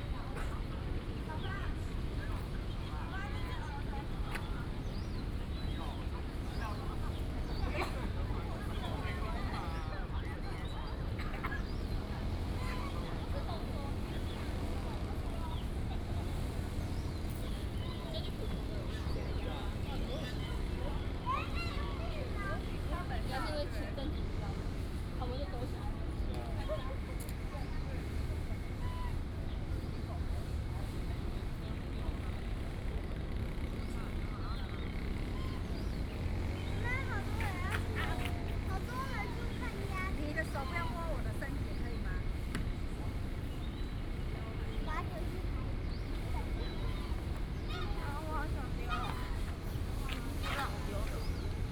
醉月湖, National Taiwan University - At the lake
At the university, Bird sounds, Goose calls